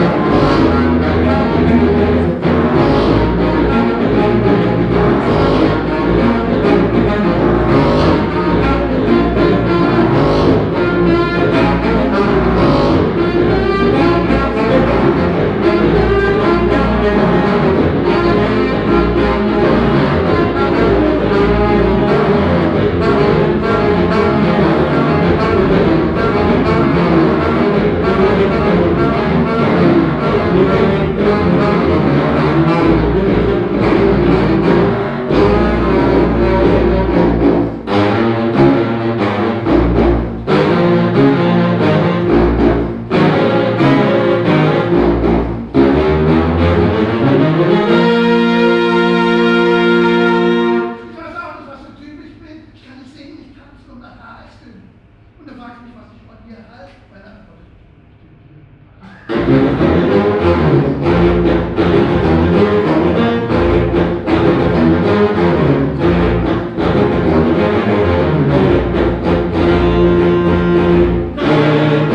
Im Konzert Saal der Zeche Carl. Der Klang des Basssaxophon Quartetts Deep Schrott bei einem Auftritt mit Applaus.
Inseide the concert hall of the venue Zeche Carl. The sound of the bass saxophone quartet Deep Schrott and applause.
Projekt - Stadtklang//: Hörorte - topographic field recordings and social ambiences